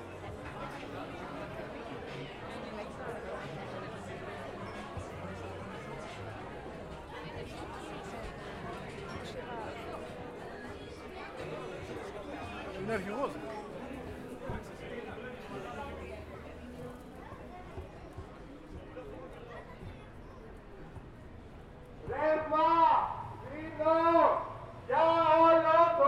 Κεντρική Πλατεία Πτολεμαΐδας, Martiou, Ptolemaida, Greece - Against War
Αποκεντρωμένη Διοίκηση Ηπείρου - Δυτικής Μακεδονίας, Ελλάς, 2 February, 23:54